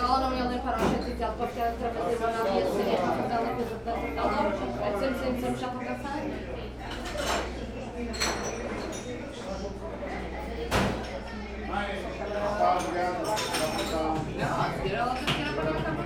having coffee at the atoca snack bar in one of the back streets of Funchal.
Funchal, Portugal, May 2015